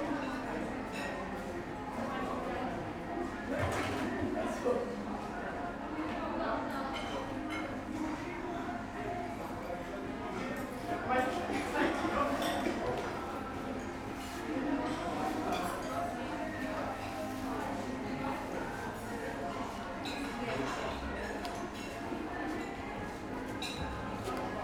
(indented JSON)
{
  "title": "Lubiatowo, Wiktoria holiday resort - canteen",
  "date": "2015-08-16 16:21:00",
  "description": "at a resort canteen. lots of guests having late dinner/early supper. the place was rather busy. order number and dish name are announced through crappy pa system. the owner and the girl at the counter talk to a microphone which is attached to a wall with duck tape.",
  "latitude": "54.81",
  "longitude": "17.83",
  "altitude": "14",
  "timezone": "Europe/Warsaw"
}